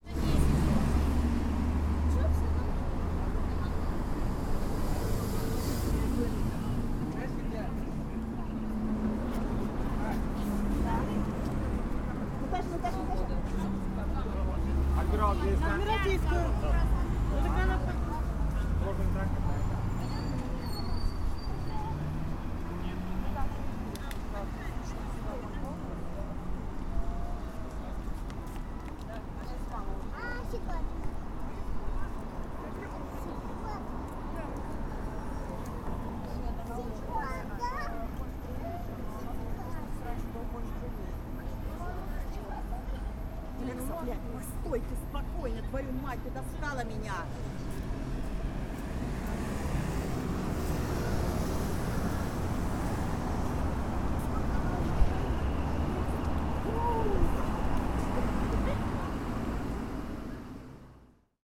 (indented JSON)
{
  "title": "Nevskij Prospect, San Pietroburgo, Russia - Walking in Nevskij Prospect",
  "date": "2016-07-26 17:05:00",
  "description": "Binaural recordings. I suggest to listen with headphones and to turn up the volume.\nWalking through Prospect Nevskij, the very heart of St Petersburg.\nRecordings made with a Tascam DR-05 / by Lorenzo Minneci",
  "latitude": "59.94",
  "longitude": "30.31",
  "altitude": "22",
  "timezone": "Europe/Moscow"
}